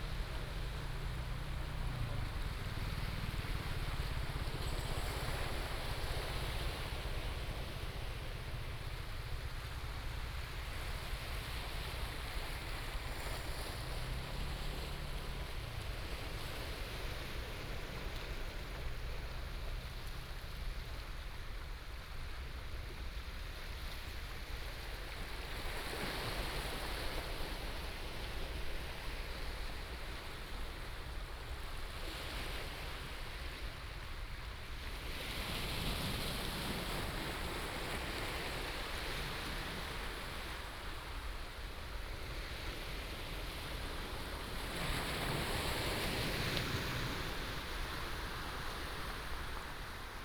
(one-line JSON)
{"title": "溪口, 淡水區, New Taipei City - On the coast", "date": "2016-11-21 16:07:00", "description": "On the coast, The sound of the waves, Aircraft sound", "latitude": "25.24", "longitude": "121.45", "timezone": "Asia/Taipei"}